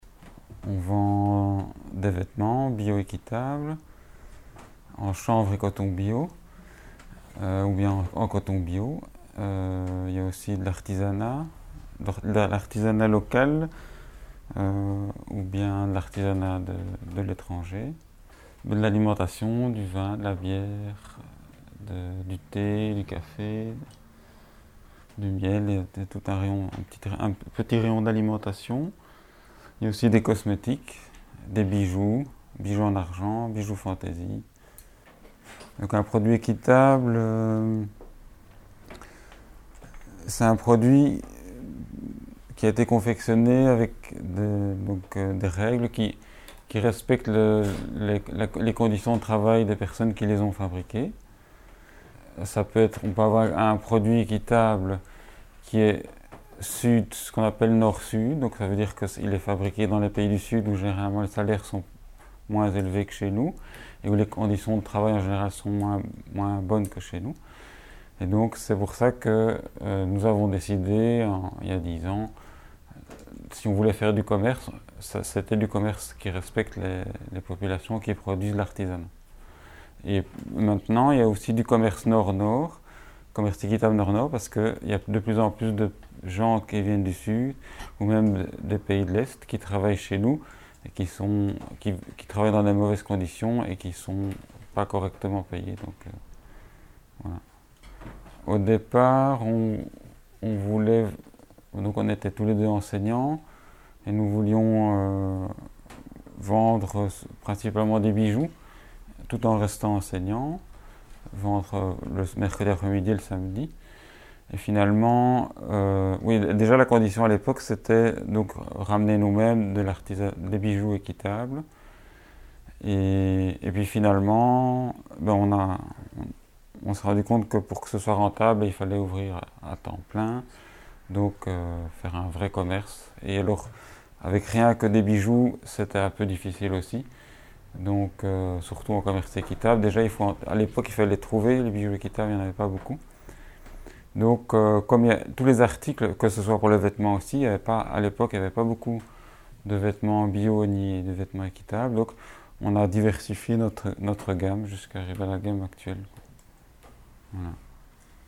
Court-St.-Étienne, Belgique - Couleurs Sud
Couleurs Sud is a fair trade shop. The owner explains what is this kind of shop and where the objects come from.
Children (6 years) ask questions to the owner as they are learning to become reporters !